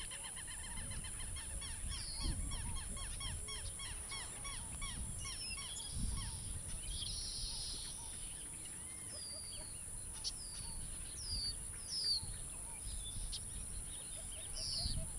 Angostura-San Sebastián, San Zenón, Magdalena, Colombia - Ciénaga San Zenón
Una angosta carretera de tierra en medio de la ciénaga de San Zenón, poblada por pájaros y otras especies aacuáticas.